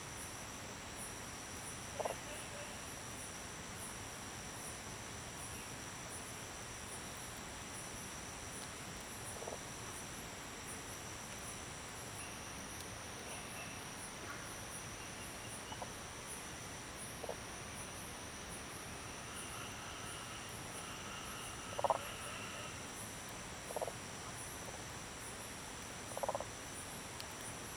種瓜路, 桃米里 Puli Township - Frogs chirping
Various types of frogs chirping
Zoom H2n MS+ XY
Nantou County, Taiwan